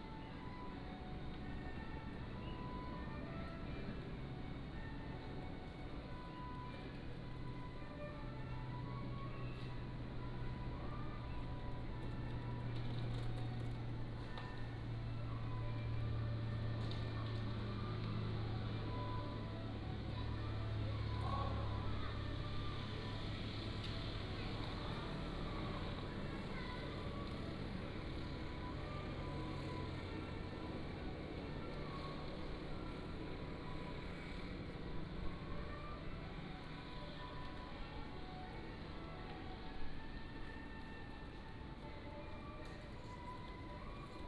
烏崁里, Magong City - In the temple
In the temple, Small village, Traffic Sound
Penghu County, Magong City